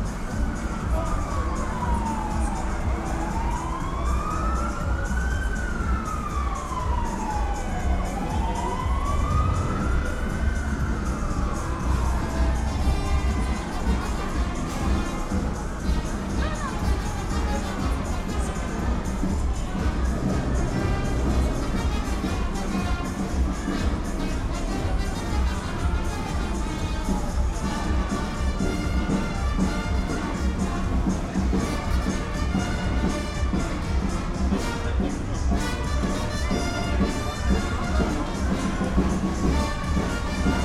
hommage à charles ives, bruxelles
19 July 2014, 16:00